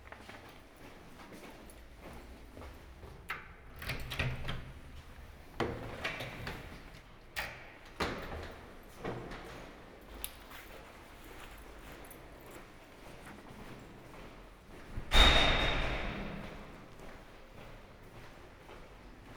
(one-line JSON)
{"title": "Ascolto il tuo cuore, città. I listen to your heart, city. Several chapters **SCROLL DOWN FOR ALL RECORDINGS** - It’s five o’clock with bells on Monday in the time of COVID19", "date": "2020-04-06 16:50:00", "description": "\"It’s five o’clock with bells on Monday in the time of COVID19\" Soundwalk\nChapter XXXV of Ascolto il tuo cuore, città. I listen to your heart, city\nMonday April 6th 2020. San Salvario district Turin, walking to Corso Vittorio Emanuele II and back, twentyseven days after emergency disposition due to the epidemic of COVID19.\nStart at 4:50 p.m. end at 5:10p.m. duration of recording 19'10''\nThe entire path is associated with a synchronized GPS track recorded in the (kmz, kml, gpx) files downloadable here:", "latitude": "45.06", "longitude": "7.69", "altitude": "239", "timezone": "Europe/Rome"}